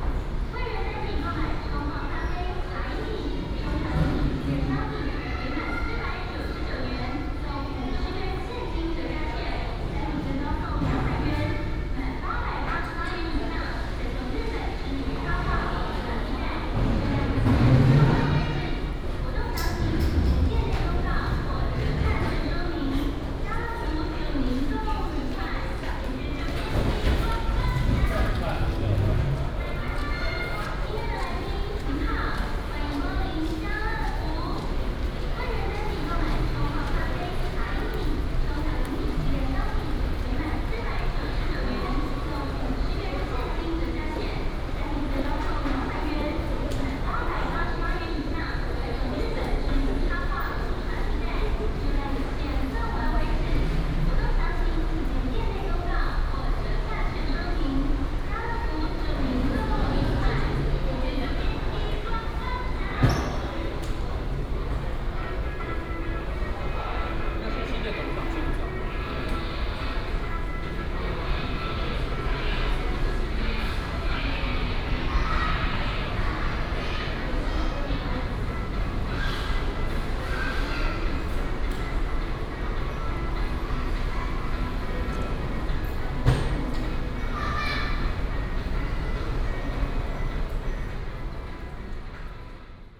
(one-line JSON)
{"title": "家樂福淡新店, 淡水區, New Taipei City - Escalator and Stroller", "date": "2016-03-11 21:24:00", "description": "In supermarkets, Escalator and Stroller", "latitude": "25.19", "longitude": "121.44", "altitude": "33", "timezone": "Asia/Taipei"}